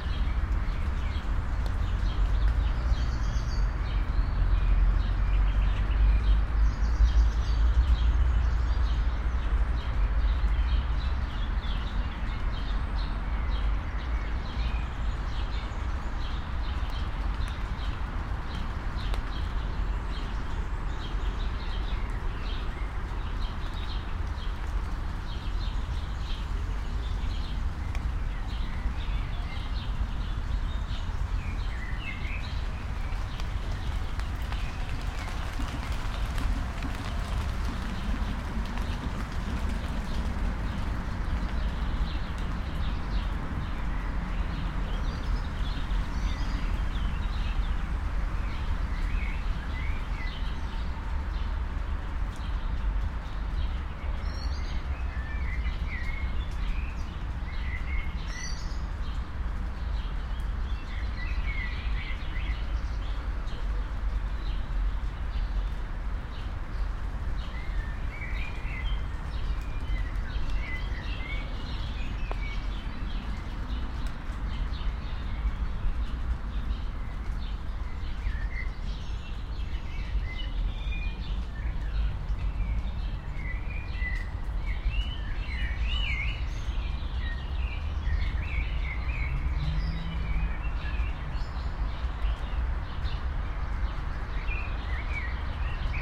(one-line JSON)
{"title": "Alt-Treptow, Berlin, Germany - under tree crown", "date": "2013-05-26 15:13:00", "description": "under tree crown ambiance with rain drops, leaves, birds, traffic ...", "latitude": "52.49", "longitude": "13.46", "altitude": "34", "timezone": "Europe/Berlin"}